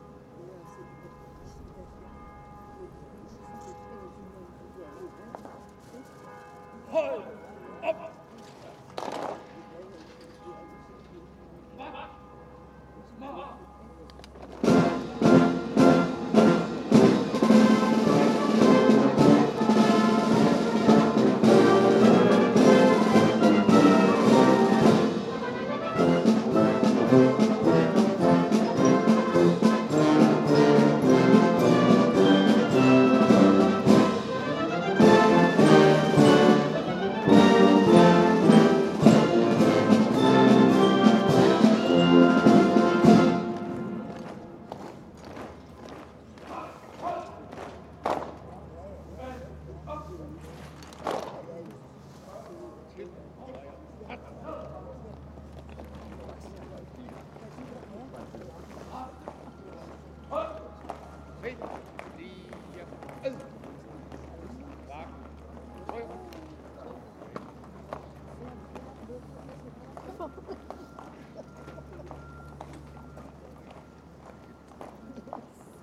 Amalienborg Royal marches, København Denmark - Changing of the Amalienborg Royal guards
A marching band accompanies the changing of the Royal guard at Amalienborg palace in Copenhagen. Tascam DR-100 with built in uni mics.
Copenhagen, Denmark